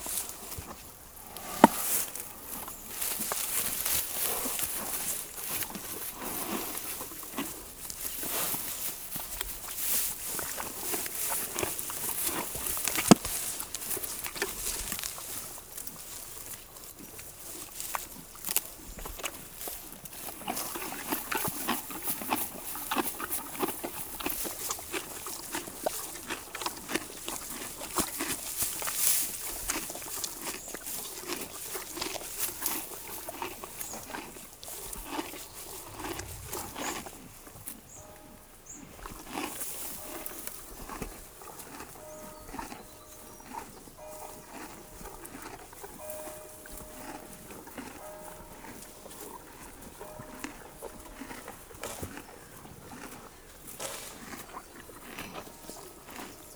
This night, we slept with the horses, on a very thick carpet of hay. On the morning, horses are near us. Your bed is very very very enviable !! So we gave the hay to the happy horses, they made a very big breakfast ! At 7 on the morning, the bell of Vatteville-La-Rue rings.
Vatteville-la-Rue, France, July 2016